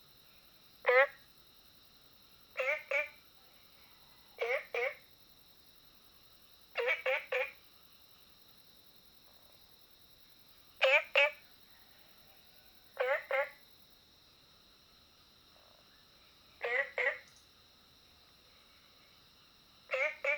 Frogs chirping, Ecological pool, Early morning, Chicken sounds
Zoom H2n MS+XY
Puli Township, Nantou County, Taiwan, 11 June